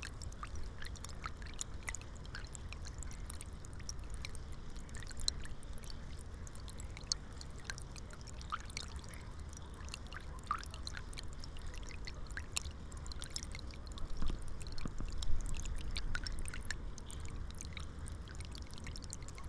Utena, Lithuania, evening at pipe
6 December 2015